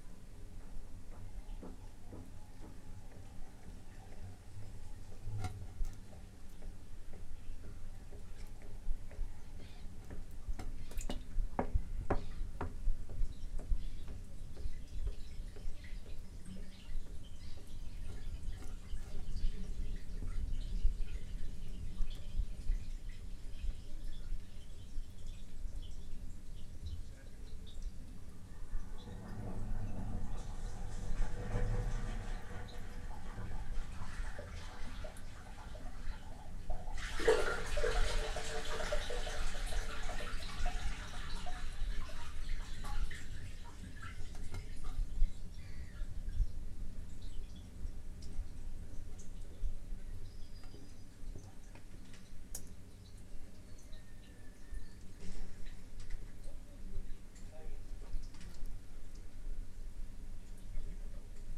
Tallinn, Baltijaam manhole covers - Tallinn, Baltijaam manhole covers (recorded w/ kessu karu)
hidden sounds, miniature omnidirectional microphones pushed through small holes in two manhole covers by an exits to the quais at Tallinns main train station